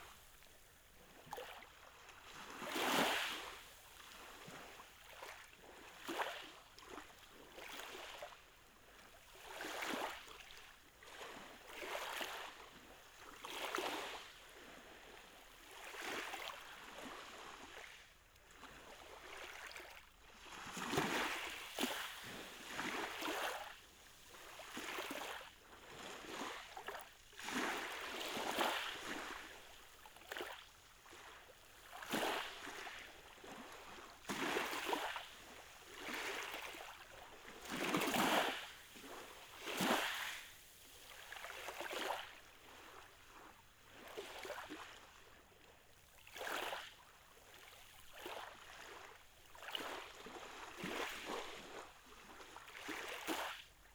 Patmos, Vagia, Griechenland - Leichte Wellen, Nacht
10 October 2004, 21:36